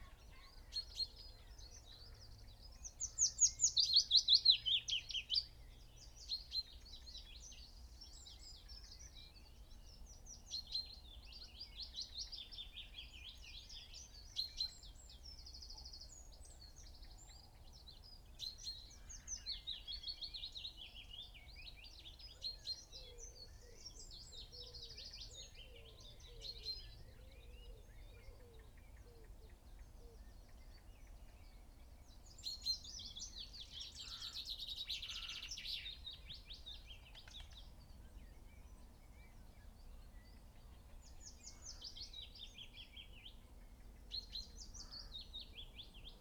Green Ln, Malton, UK - willow warbler song soundscape ...
willow warbler song soundscape ... dpa 4060s clipped to bag in crook of tree to zoom h5 ... bird song ... calls from ... wren ... wood pigeon ... song thrush ... crow ... pheasant ... dunnock ... chaffinch ... yellowhammer ... buzzard ... magpie ... blackbird ...
April 2022